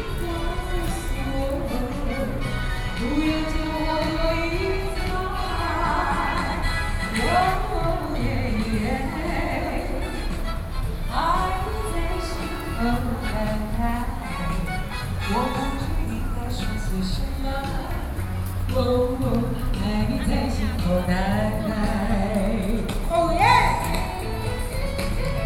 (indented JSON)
{
  "title": "228 Park - Performances of old songs",
  "date": "2012-10-27 17:00:00",
  "latitude": "25.04",
  "longitude": "121.51",
  "altitude": "11",
  "timezone": "Asia/Taipei"
}